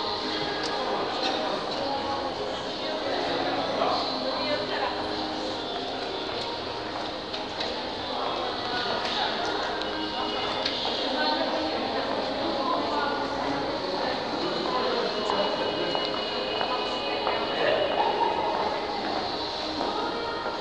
{"title": "Sounds in supermarket, Szczecin, Poland", "date": "2010-09-22 18:24:00", "description": "Sounds in supermarket.", "latitude": "53.43", "longitude": "14.48", "timezone": "Europe/Warsaw"}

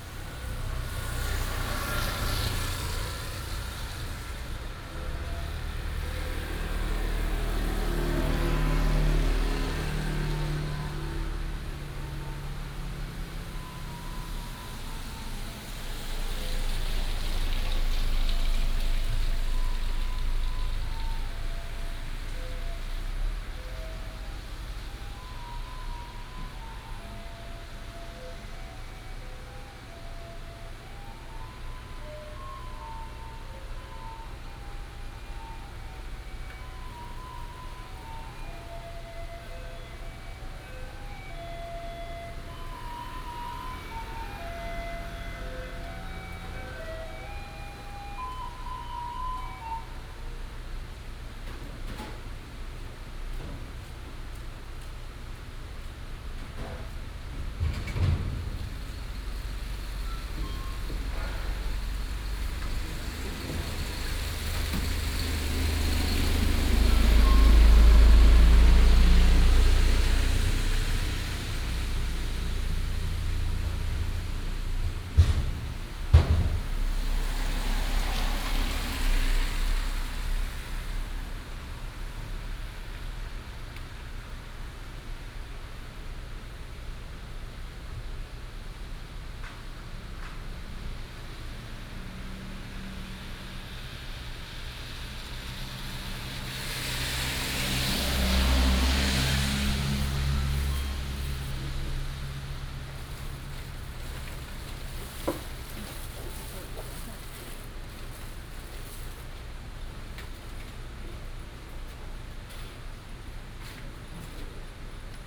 {"title": "Wenquan St., Wulai Dist., New Taipei City - Rainy day", "date": "2016-12-05 10:10:00", "description": "In front of the convenience store, Traffic sound, Construction noise, Rainy day", "latitude": "24.86", "longitude": "121.55", "altitude": "138", "timezone": "GMT+1"}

